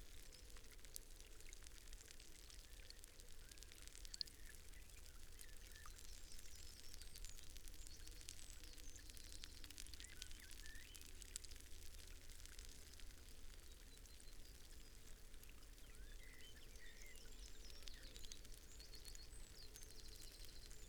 Green Ln, Malton, UK - rivulet down a country road ...
rivulet down a country road ... an irrigation system hooked up to a bore hole had blown a connection ... this sent a stream of water down the track and pathways ... the stream moved small pebbles and debris down the side of the road ... recorded with dpa 4060s in a parabolic to mixpre3 ... bird calls ... song ... blackbird ... skylark ... yellowhammer ... wren ... corn bunting ... linnet ...
Yorkshire and the Humber, England, United Kingdom, 9 July 2022